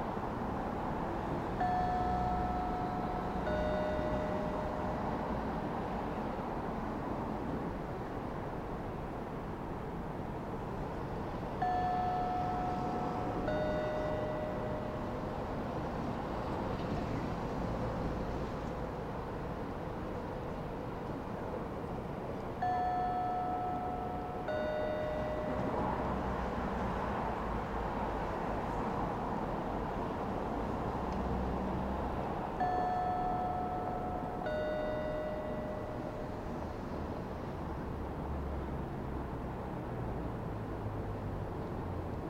Marunouchi, Chiyoda-ku, Tōkyō-to, Japan - The chiming speaker at the exit of the Tokyo International Forum
Walking home we espied the most amazing building and went to check it out; very vast and boat-like it has an enormous lobby that seems to be open very late and something of a grand, sheltered thoroughfare. A speaker by one of the exits plays this rather calming yet inexplicable chiming sound, so I listened for a while enjoying the continuity of its recorded bell sounds with the ebb and flow of traffic on the road.